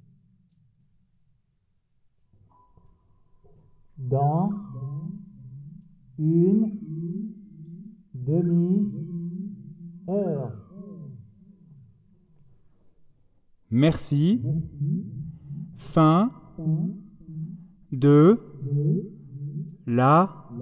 Audun-le-Tiche, France - Phone tube
In an underground mine, we are acting with a tube, using it like a big phone. This tube is enormous as it's 300 meters long. What we can find in a mine is just funny.
9 October